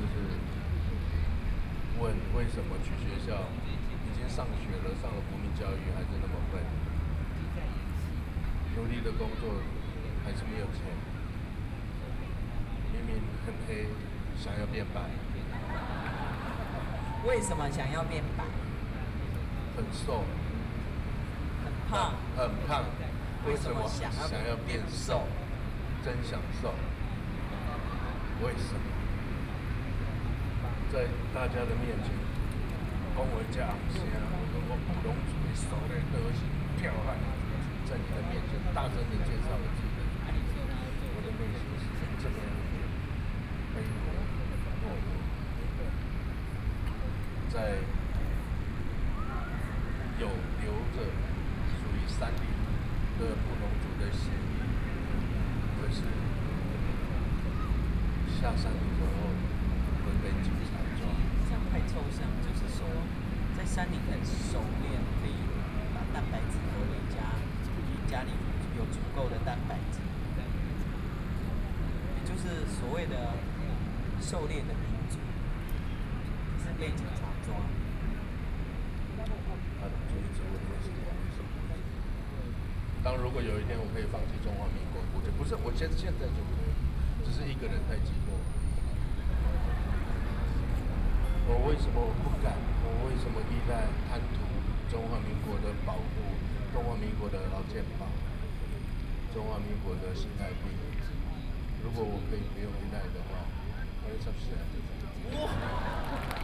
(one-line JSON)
{"title": "National Chiang Kai-shek Memorial Hall, Taipei - antinuclear", "date": "2013-09-06 20:32:00", "description": "Taiwanese aborigines are published antinuclear ideas, Taiwanese Aboriginal singers in music to oppose nuclear power plant, Aboriginal songs, Sony PCM D50 + Soundman OKM II", "latitude": "25.04", "longitude": "121.52", "altitude": "8", "timezone": "Asia/Taipei"}